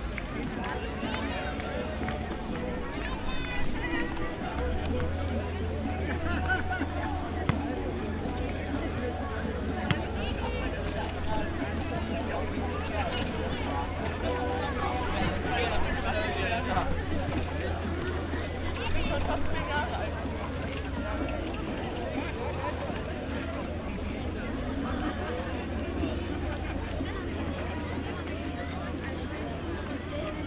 summer weekend evening, loads of people gather at one of the barbeque areas on former Tempelhof airport.
(SD702, Audio Technica BP4025)
Berlin, Germany, July 2013